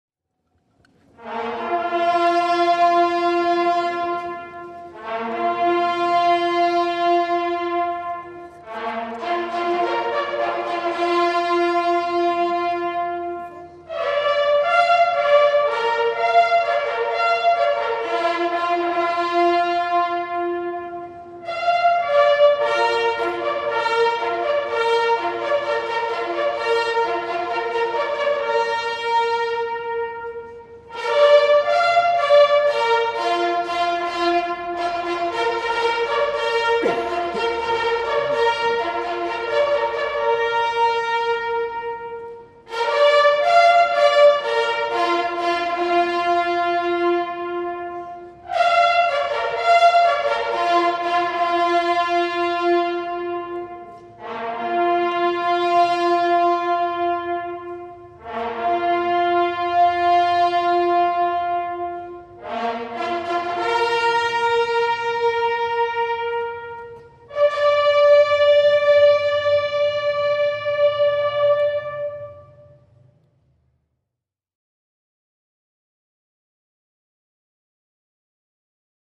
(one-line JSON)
{"title": "Last post at the Menin Gate in Ypres", "date": "2008-05-11 20:00:00", "description": "This daily tribute (performed by a team of local buglers) serves to honour the memory of the soldiers of the British Empire, who fought and died in the immortal Ypres Salient during the First World War.", "latitude": "50.85", "longitude": "2.89", "altitude": "22", "timezone": "Europe/Brussels"}